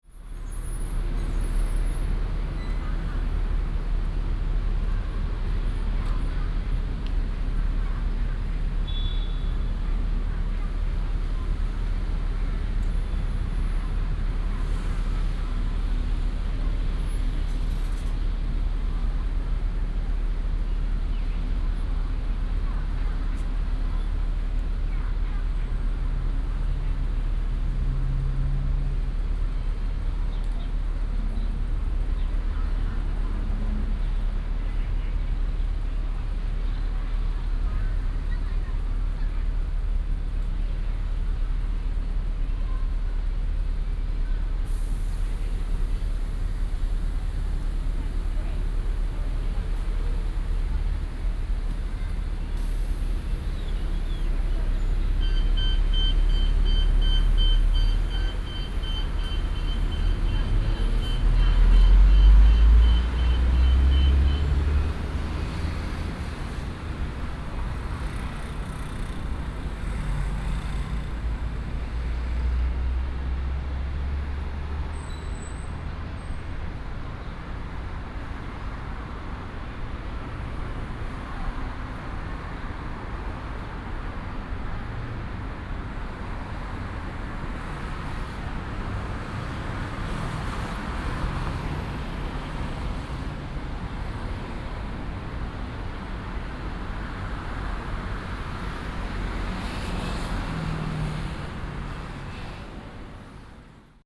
Zhongzheng Rd., Taoyuan Dist., Taoyuan City - At the bus station
At the bus station, Traffic sound